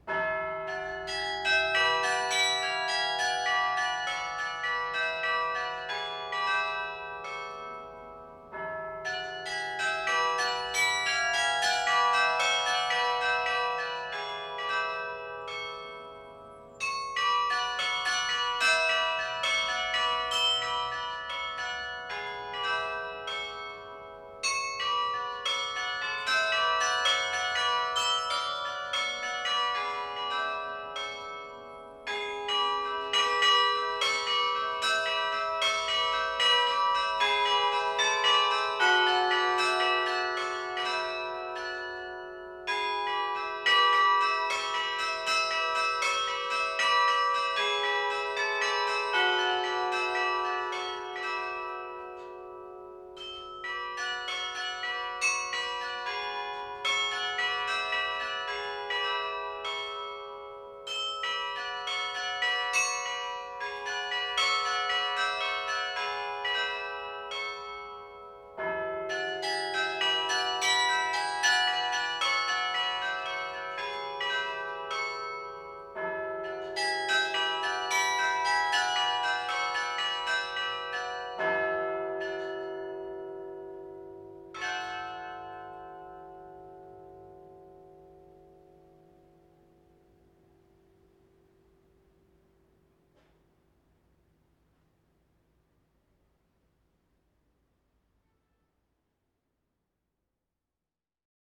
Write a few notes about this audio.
Église St-Christophe - Tourcoing, Carillon, Maitre carillonneur : Mr Michel Goddefroy